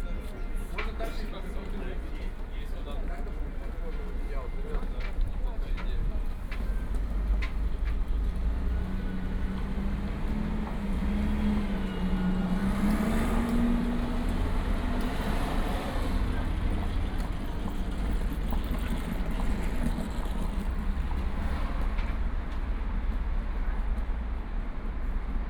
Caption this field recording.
Sitting in front of the coffee shop, Footsteps, Traffic Sound, Birdsong